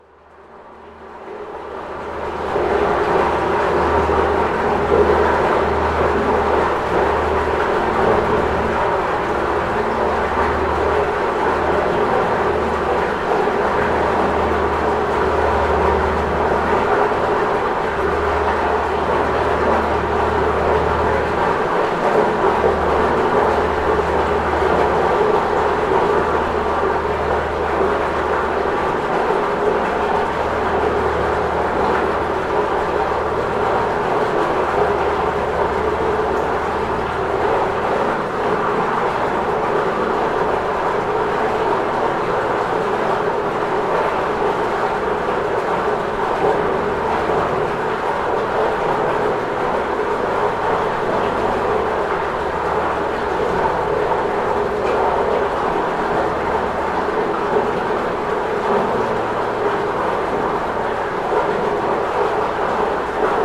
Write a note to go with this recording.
Station de relevage petit bâtiment cylindrique écoré d'une peinture murale, avec des ouies permettant d'entendre ce qui se passe à l'intérieur. Vers le point de départ du sentier lacustre qui mène à la Pointe de l'Ardre.